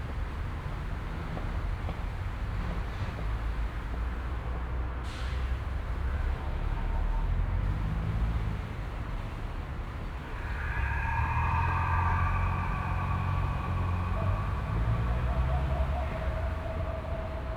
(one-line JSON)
{"title": "Gruia, Klausenburg, Rumänien - Cluj, Fortress Hill project, emotion tube 1", "date": "2014-05-27 09:40:00", "description": "At the temporary sound park exhibition with installation works of students as part of the Fortress Hill project. Here the sound of emotions and thoughts created with the students during the workshop and then arranged for the installation coming out of one tube at the park. In the background traffic and city noise.\nSoundmap Fortress Hill//: Cetatuia - topographic field recordings, sound art installations and social ambiences", "latitude": "46.77", "longitude": "23.58", "altitude": "375", "timezone": "Europe/Bucharest"}